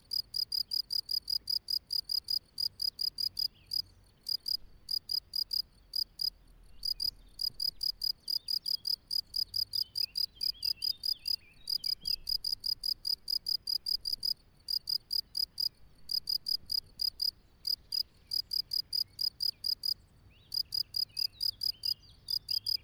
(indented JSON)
{
  "title": "Meadow at the Tauber west of Werbach",
  "date": "2021-06-19 10:30:00",
  "description": "Crickets singing in a meadow. Recorded with an Olympus LS 12 Recorder using the built-in microphones. Recorder placed on the ground near a cricket-burrow with the microphones pointing skyward. In the background various motor noises as well as birds singing and Cyclists passing on the nearby bike-path.",
  "latitude": "49.67",
  "longitude": "9.63",
  "altitude": "170",
  "timezone": "Europe/Berlin"
}